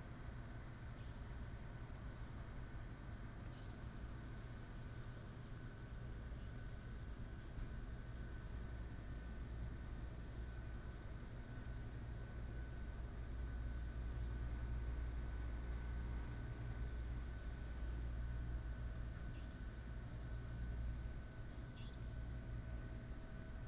佳冬門市, Jiadong Township, Pingtung County - Late night shop
Night outside the convenience store, Late night street, Traffic sound, Bird cry, Truck unloading
Binaural recordings, Sony PCM D100+ Soundman OKM II